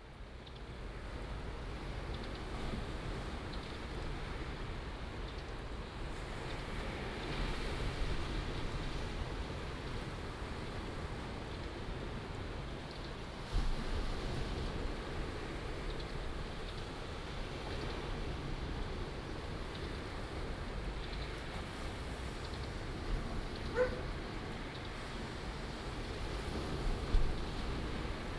白沙灣, 石門區德茂里 - at the seaside
at the seaside, Sound of the waves, Bird sounds